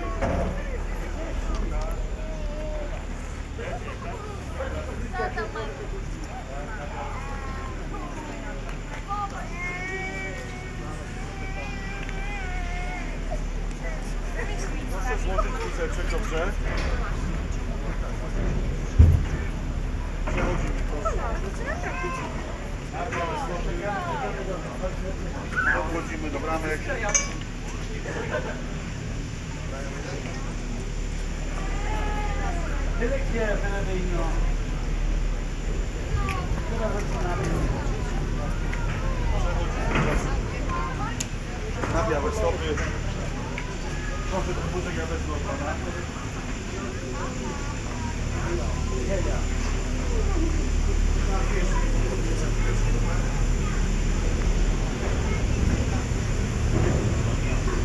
Ski Lift, Krynica-Zdrój, Poland - (657 BI) Ski lift ride
Binaural recording of ski lift ride from point of the queue, through gates to a part of ride itself.
Recorded with Sound Devices Mix-Pre6 II and DPA 4560 microphones.
województwo małopolskie, Polska, 26 July 2020, 14:10